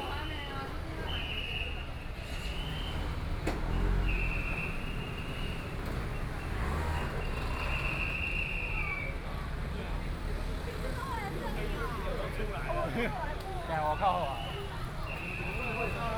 內湖區港富里, Taipei City - Traditional Festivals
Traditional Festivals, Distance came the sound of fireworks, Traffic Sound
Please turn up the volume a little. Binaural recordings, Sony PCM D100+ Soundman OKM II
Taipei City, Taiwan, 12 April 2014, 21:21